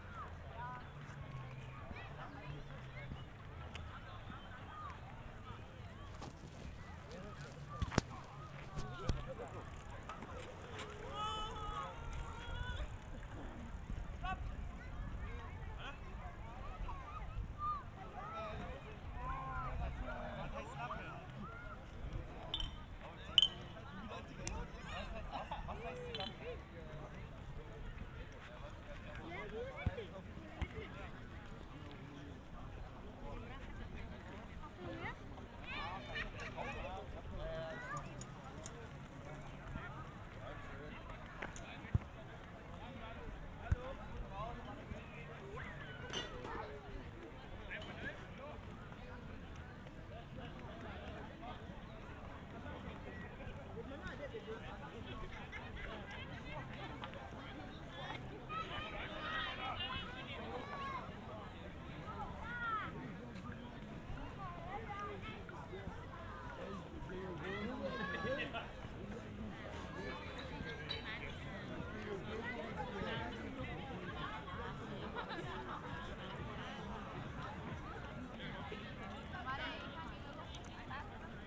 Ziegelwiese Park, Halle (Saale), Germania - WLD2020, World Listening Day 2020, in Halle, double path synchronized recording: B
Halle_World_Listening_Day_200718
WLD2020, World Listening Day 2020, in Halle, double path synchronized recording
In Halle Ziegelwiese Park, Saturday, July 18, 2020, starting at 7:48 p.m., ending at 8:27 p.m., recording duration 39’18”
Halle two synchronized recordings, starting and arriving same places with two different paths.
This is file and path B:
A- Giuseppe, Tascam DR100-MKIII, Soundman OKMII Binaural mics, Geotrack file:
B – Ermanno, Zoom H2N, Roland CS-10M binaural mics, Geotrack file:
Sachsen-Anhalt, Deutschland